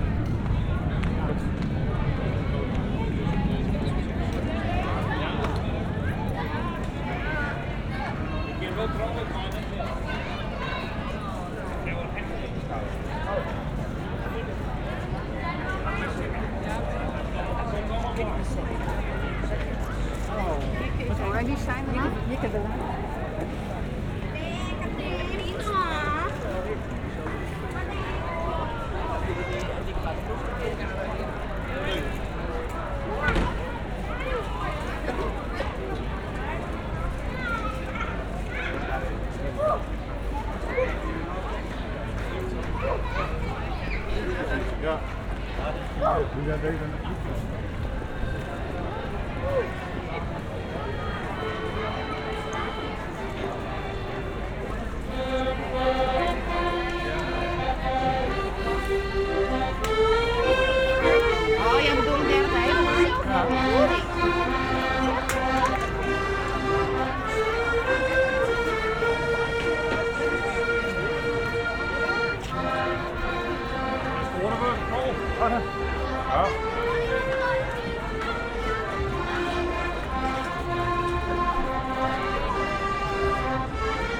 franeker: voorstraat - the city, the country & me: fair soundwalk
fair during the frisian handball tournament pc (franeker balverkaatsdag)
the city, the country & me: august 1, 2012